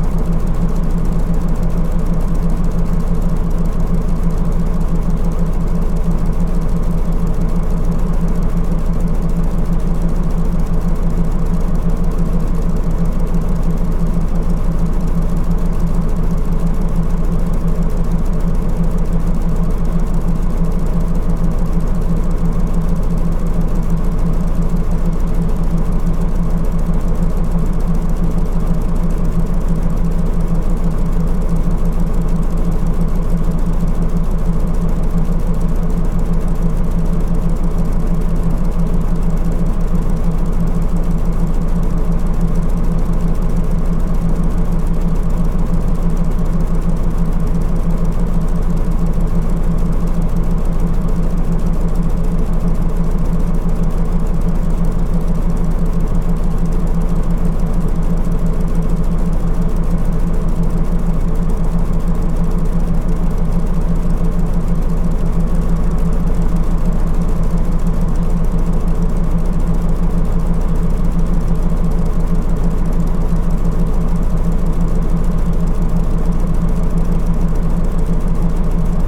Tallinn, Baltijaam Tallinn-Tartu train engine - Tallinn, Baltijaam Tallinn-Tartu train engine (recorded w/ kessu karu)
hidden sounds, rattling of an access plate to the engine of a Tallinn-Tartu train at Tallinn's main train station.